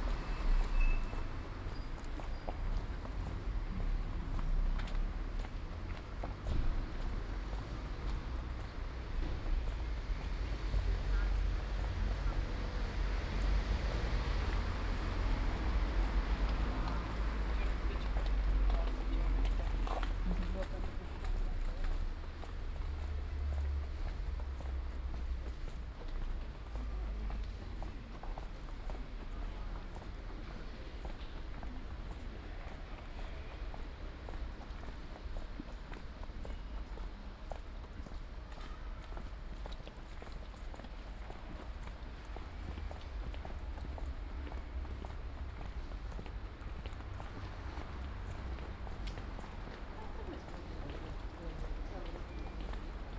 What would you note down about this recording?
"Supermercato serale tre mesi dopo ai tempi del COVID19" Soundwalk, Chapter CVI of Ascolto il tuo cuore, città. I listen to your heart, city, Saturday, June 13th 2020. Walking with shopping in San Salvario district, Turin ninety-five days after (but day forty-one of Phase II and day twenty-ight of Phase IIB and day twenty-two of Phase IIC) of emergency disposition due to the epidemic of COVID19. Start at 8:21 p.m. end at #:00 p.m. duration of recording ##'42'', The entire path is associated with a synchronized GPS track recorded in the (kml, gpx, kmz) files downloadable here: